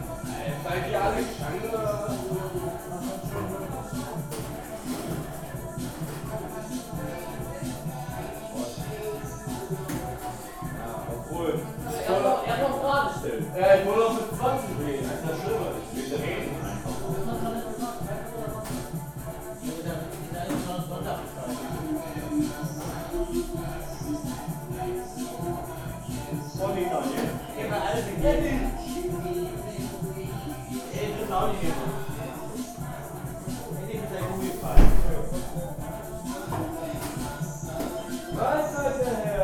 Altenessen - Nord, Essen, Deutschland - stauder klause
Essen, Germany, 19 July 2014, 23:30